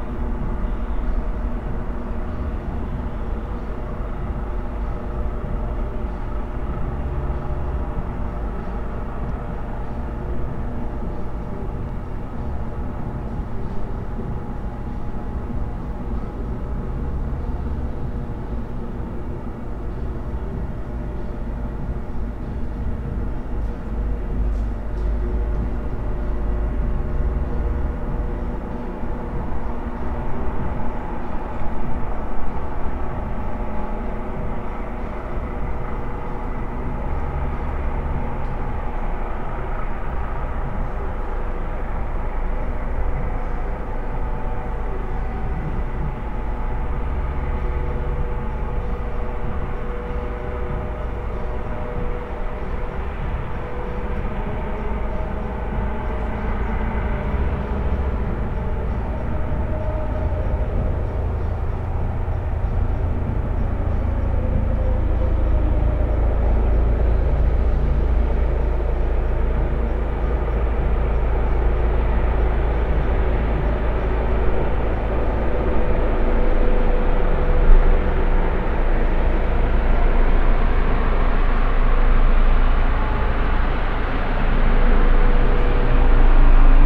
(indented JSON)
{
  "title": "from/behind window, Mladinska, Maribor, Slovenia - at night",
  "date": "2012-10-20 22:53:00",
  "description": "chopper monitoring football match, fans shouts",
  "latitude": "46.56",
  "longitude": "15.65",
  "altitude": "285",
  "timezone": "Europe/Ljubljana"
}